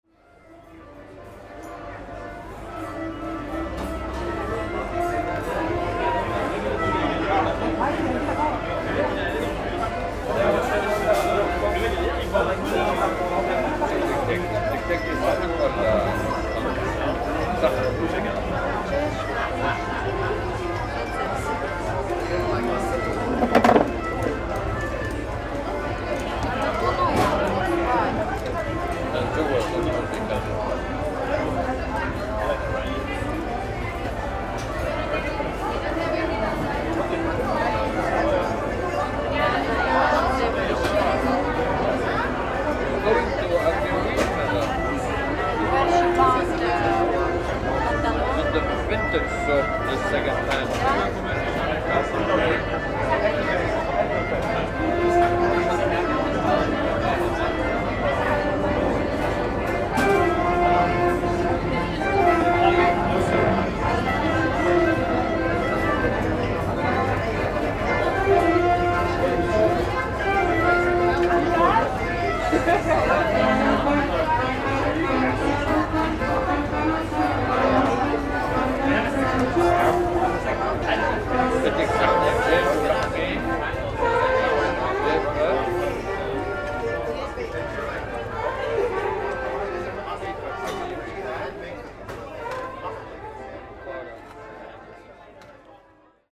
Near the Grand Place Bruxelles, Zoom H6